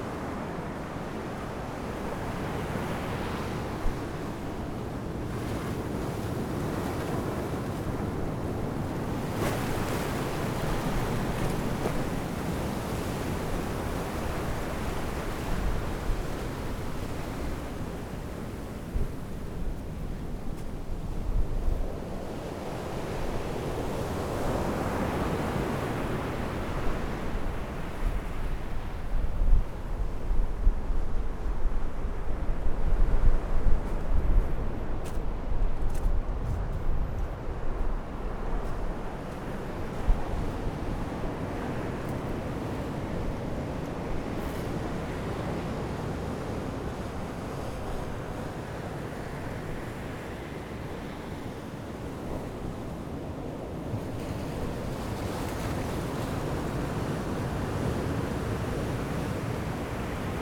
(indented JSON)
{
  "title": "Taitung City, Taiwan - Sound of the waves",
  "date": "2014-01-16 11:30:00",
  "description": "At the beach, Sound of the waves, Zoom H6 M/S, Rode NT4",
  "latitude": "22.75",
  "longitude": "121.16",
  "timezone": "Asia/Taipei"
}